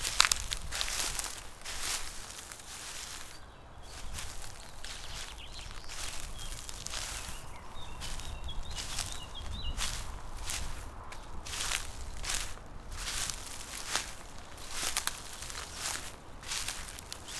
little island, river drava, melje - dry grass and poplar leaves, sand, walk